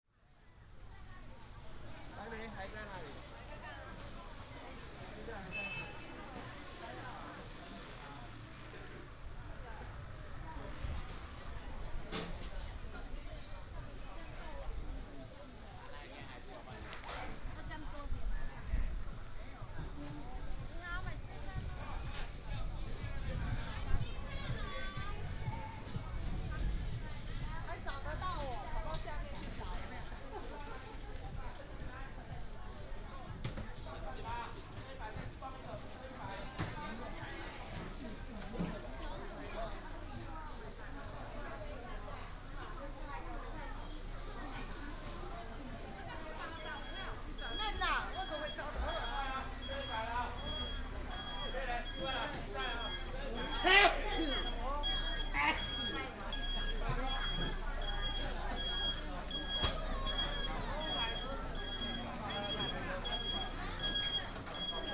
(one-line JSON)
{"title": "Zhuren St., Zhubei City - walking in the Street", "date": "2017-02-07 12:33:00", "description": "Small market, alley, Walking in the traditional market", "latitude": "24.84", "longitude": "121.01", "altitude": "35", "timezone": "Asia/Taipei"}